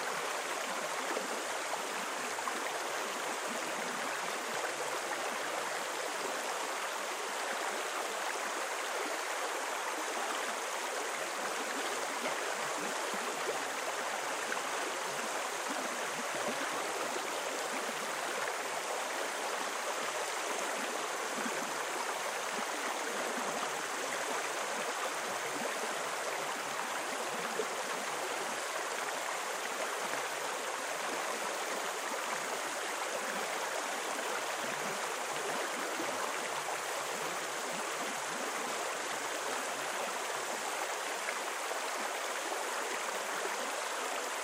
Puketi Forest, New Zealand - Puketi Stream
Okaihau, New Zealand, 14 November 2009